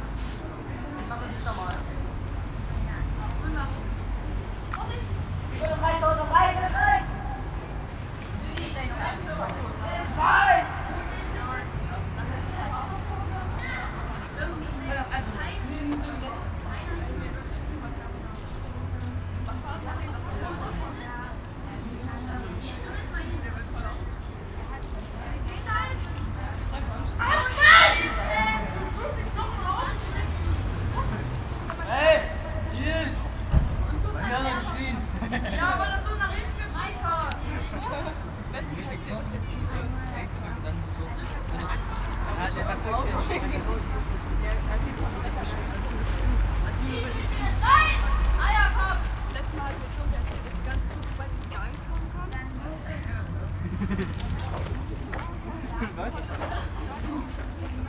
henriettenpark, leipzig lindenau.
jugendliche beim spielen im henriettenpark, dazu fahrradfahrer & passanten.